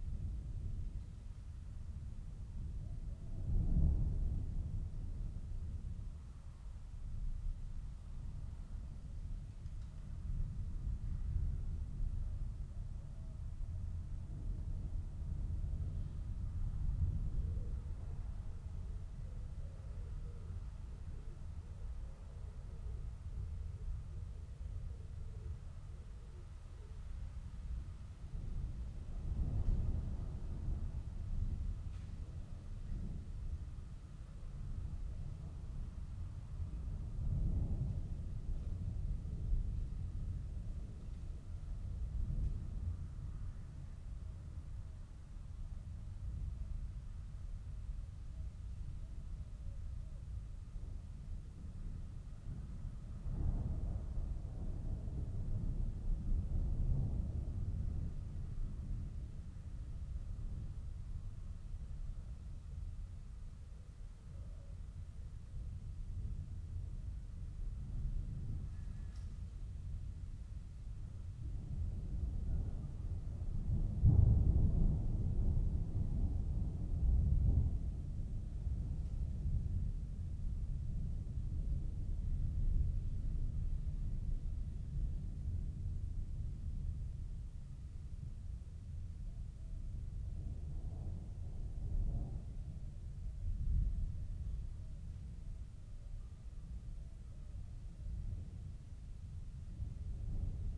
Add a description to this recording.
inside church ... outside approaching thunderstorm ... open lavalier mics on T bar on mini tripod ... background noise ...